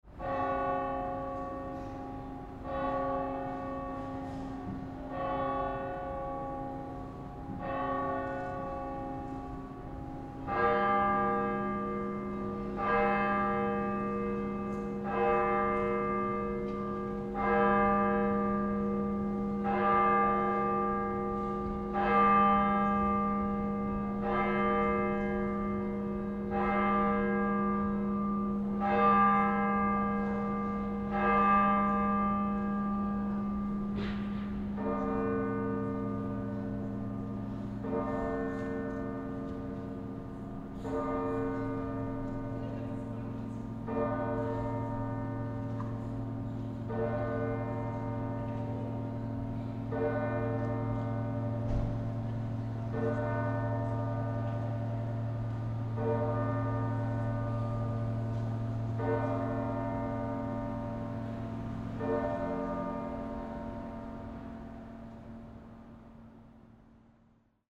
Recorded the Bells from the Minster of Ulm
Ulm, Germany, 16 November 2012, ~22:00